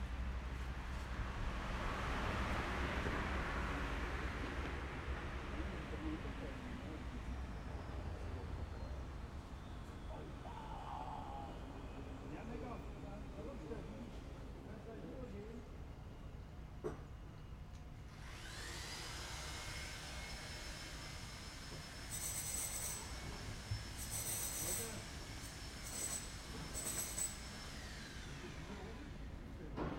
{"title": "leipzig lindenau, bahnhof lindenau, baustelle", "date": "2011-09-05 22:39:00", "description": "baustelle am bahnhof lindenau, baugeräusche, straszenbahn, keine züge.", "latitude": "51.33", "longitude": "12.32", "altitude": "116", "timezone": "Europe/Berlin"}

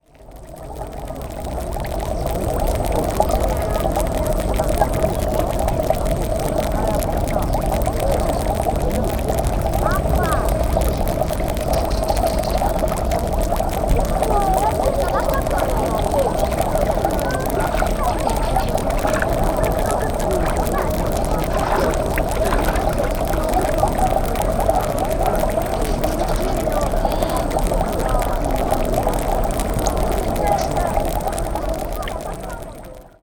{"date": "2011-09-03 10:56:00", "description": "Pantelleria, Specchio di Venere Lake, the source", "latitude": "36.81", "longitude": "11.99", "altitude": "6", "timezone": "Europe/Rome"}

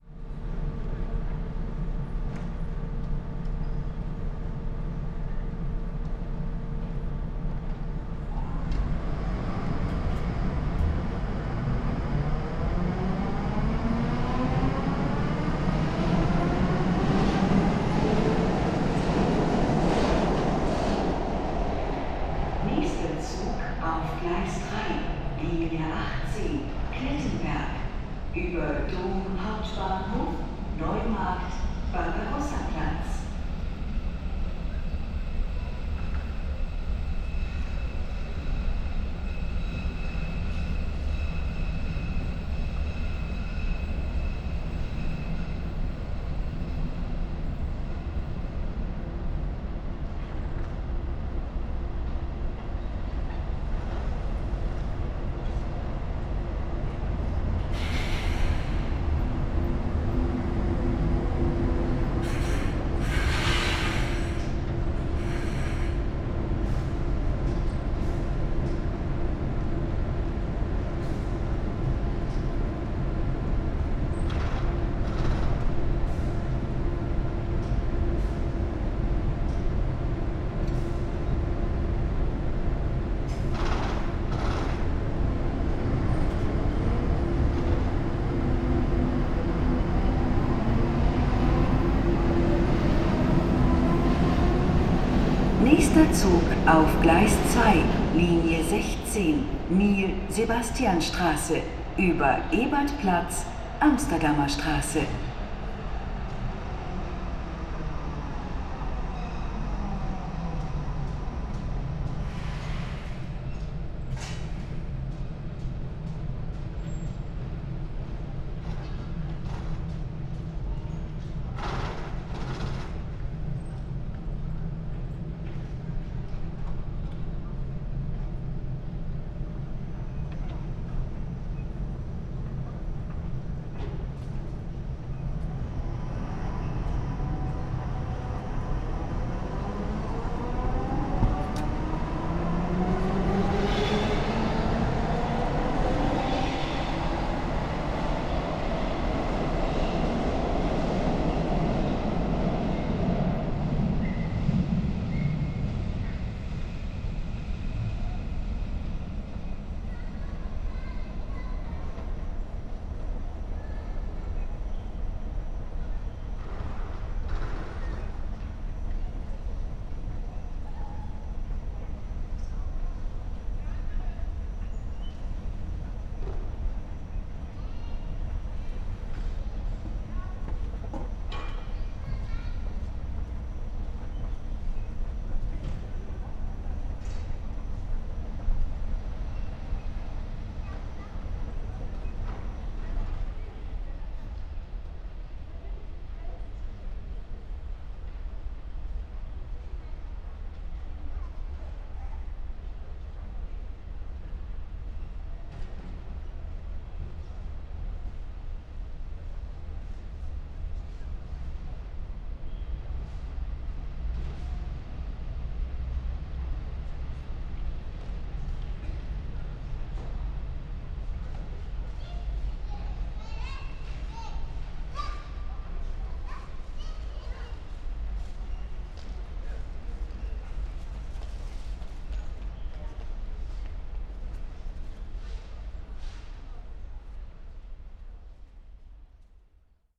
{"title": "U-Bahn, Breslauer Platz, Köln - subway station ambience", "date": "2014-01-05 12:35:00", "description": "relativeley new subway station at Breslauer Platz, Koeln. station ambience from the level above the train platforms.\n(PCM D50, Primo EM172)", "latitude": "50.94", "longitude": "6.96", "altitude": "59", "timezone": "Europe/Berlin"}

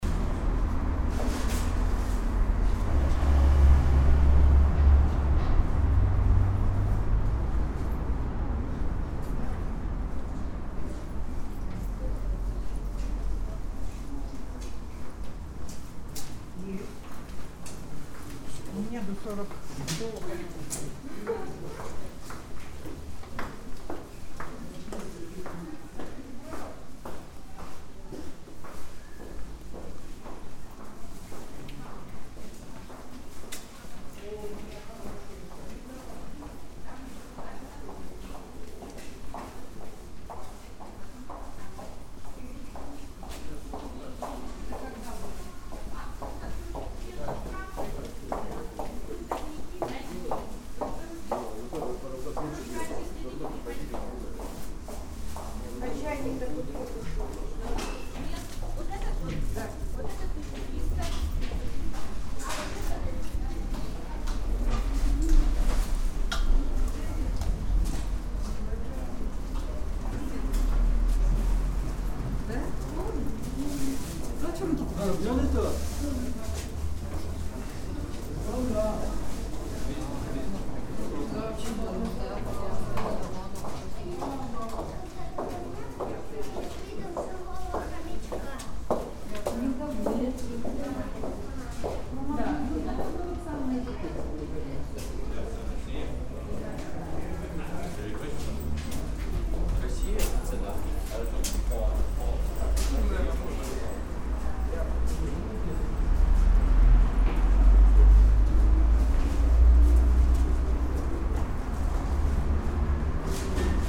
{"title": "Подземный переход, Барнаул, Алтайский край, Россия - Barnaul, underground crossing", "date": "2018-09-11 12:00:00", "description": "Walk through the underground crossing at the October square (Октябрьская площадь) in Barnaul. Voices in Russian, crowd sounds, traffic noise from above, ambience. Smooth loop.", "latitude": "53.35", "longitude": "83.77", "altitude": "193", "timezone": "GMT+1"}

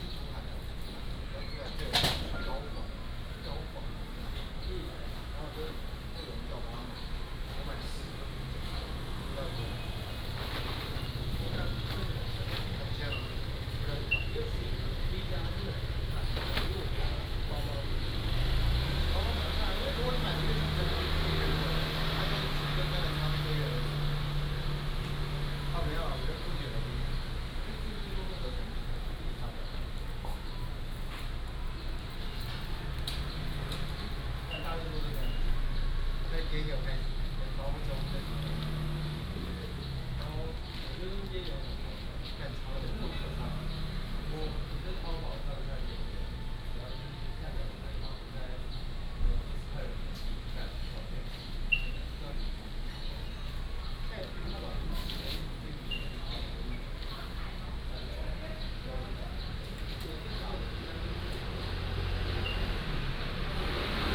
{"title": "Dabei Rd., Shilin Dist. - Small alley", "date": "2015-05-25 13:45:00", "description": "Small alley, in front of the Convenience store", "latitude": "25.09", "longitude": "121.53", "altitude": "13", "timezone": "Asia/Taipei"}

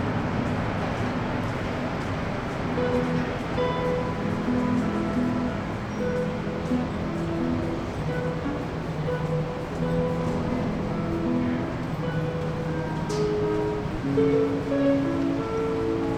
{"title": "Montreal: Guy-Concordia Metro - Guy-Concordia Metro", "date": "2009-01-03 13:00:00", "description": "equipment used: Zoom H2", "latitude": "45.50", "longitude": "-73.58", "altitude": "56", "timezone": "America/Montreal"}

